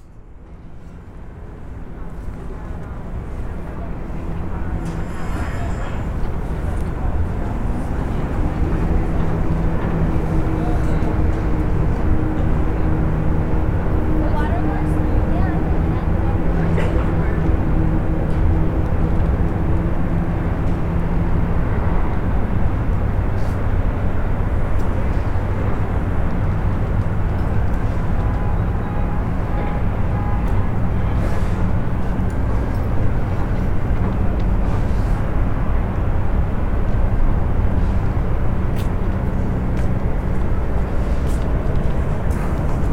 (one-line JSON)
{"title": "Houston - Houston, Cityscape", "date": "2008-03-20 10:30:00", "description": "Houston Cityscape from a terrace at the George R Brown Convention Center.", "latitude": "29.75", "longitude": "-95.36", "altitude": "13", "timezone": "localtime"}